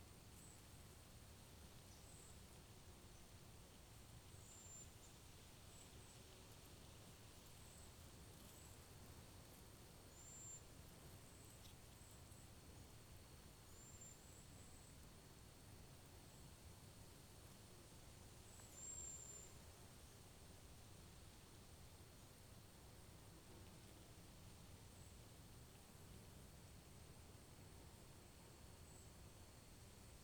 The sounds of a sunny afternoon in the Chengwatana State Forest
Minnesota, United States, 10 August 2022, 11:20